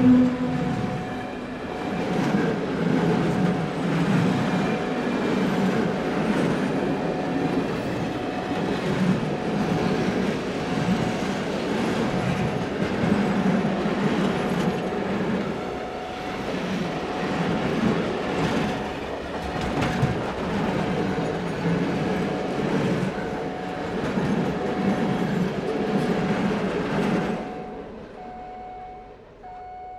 Poznan, Strozynskiego street. - fright train crossing
a long fright train passes quickly. recording right at the barrier. a group of students waiting for the train to pass. after the barrier opened there were some glitchy noises coming from the mechanism. you can hear them around 1:45 - 1:50. (sony d50)
Poznań, Poland